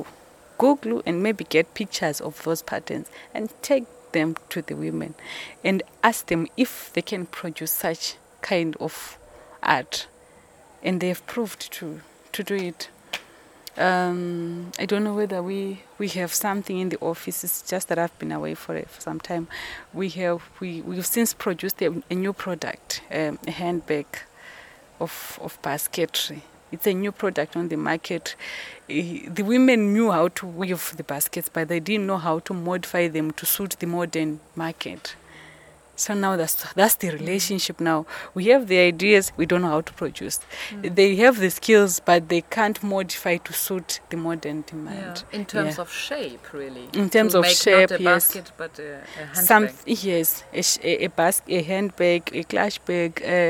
Office of Basilwizi Trust, Binga, Zimbabwe - Abbigal Muleya - they teach me what i don't know...
Abbigal describes her work with rural women, the partnership she experiences “they teach me what I don’t know, and I teach them what they don’t know”… and she relates how she re-tells information she has gathered in online research to the local crafts women so that together with them, and based on their knowledge and skills, new products and new ways of production can be developed …
The recordings with Abbigal are archived here: